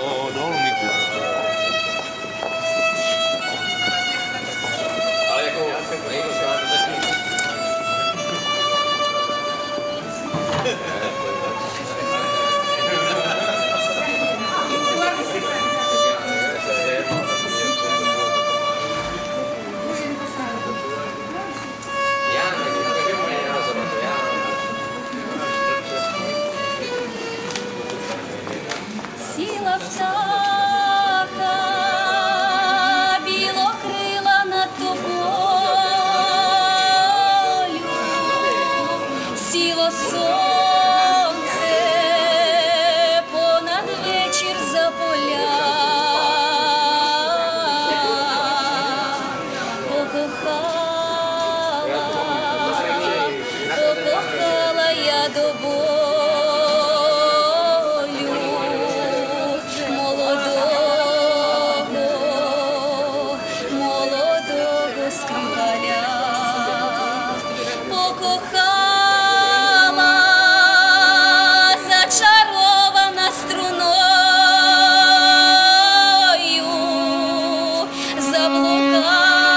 Česká street, Brno, Czech Republic - Belarussian buskers
Musicians of ”Krupickie Muziki“ from Belarus busking on a street in the heart of Brno.
Recorded along with a moving picture using Olympus XZ-1 camera.
Jihovýchod, Česko, 28 September